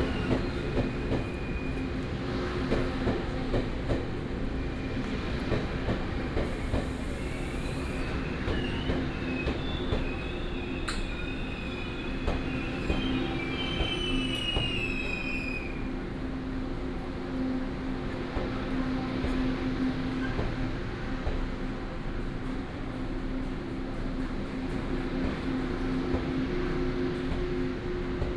大甲火車站, 大甲區大甲里 - At the station platform
At the station platform, Train arrived